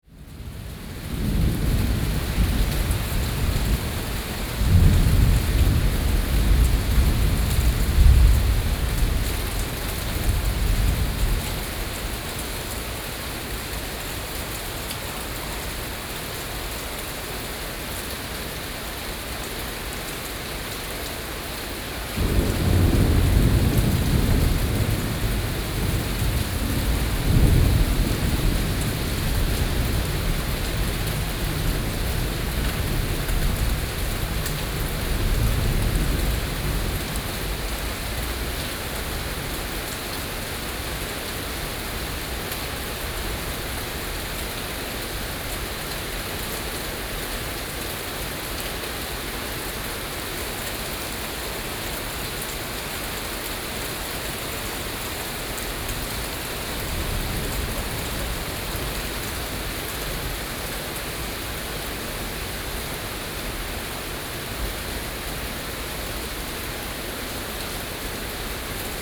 Beitou - thunderstorms
thunderstorms, Sony PCM D50 + Soundman OKM II